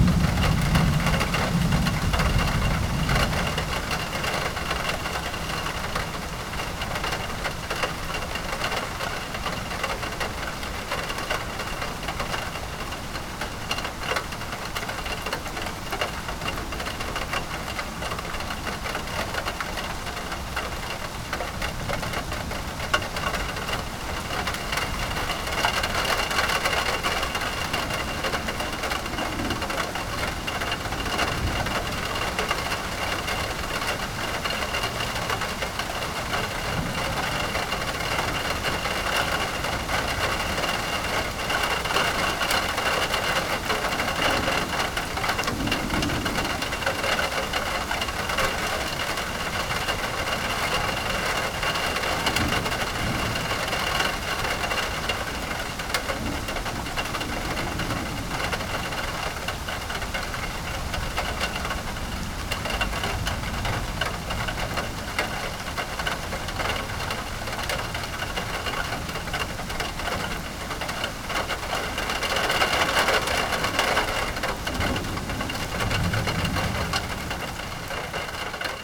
Early Morning Storm, Houston, Texas - Early Morning Storm
A bit of thunder and raindrops falling on a metal awning over our front porch.
Sony PCM D50